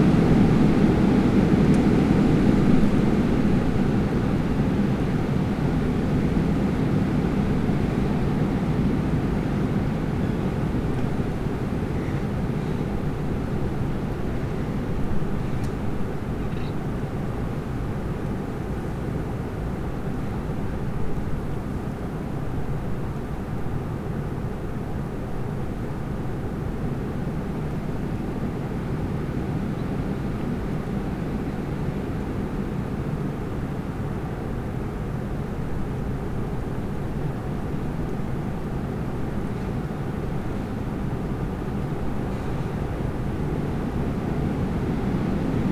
during storm
the city, the country & me: march 8, 2013
Deutschland, European Union, March 2013